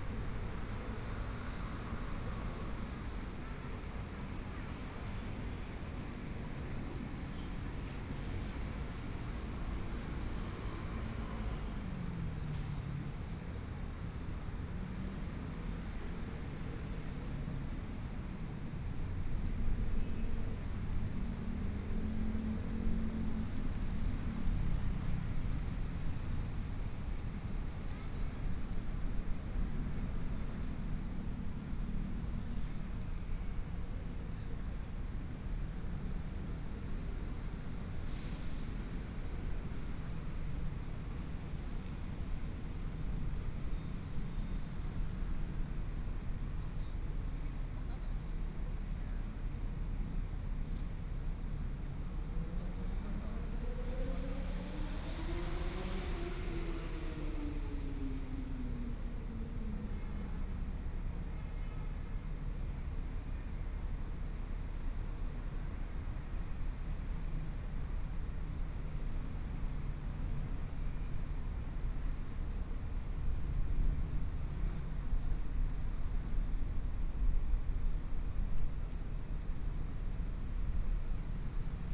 Regent Taipei, Taipei City - in the Plaza
in the Plaza, Environmental sounds, Traffic Sound, Motorcycle Sound, Pedestrians on the road, Binaural recordings, Zoom H4n+ Soundman OKM II
Zhongshan District, 晶華酒店